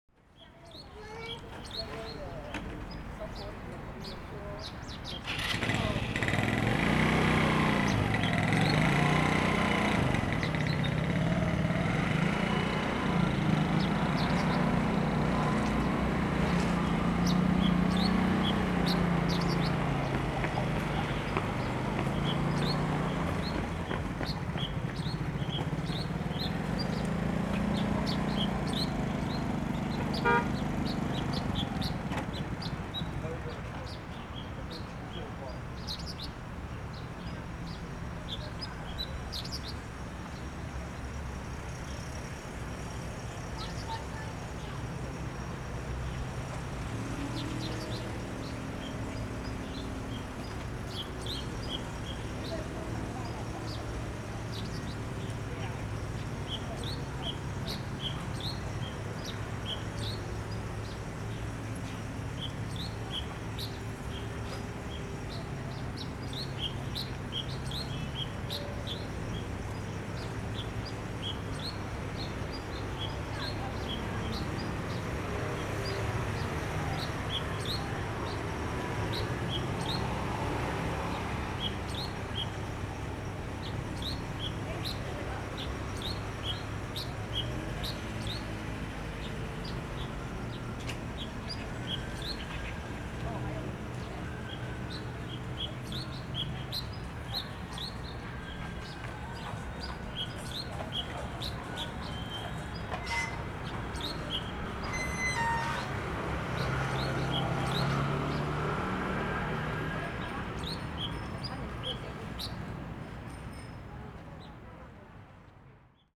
Ln., Jinhe Rd., Zhonghe Dist., New Taipei City - Bird calls
Bird calls
Sony Hi-MD MZ-RH1+Sony ECM-MS907
New Taipei City, Taiwan, 14 February 2012, ~16:00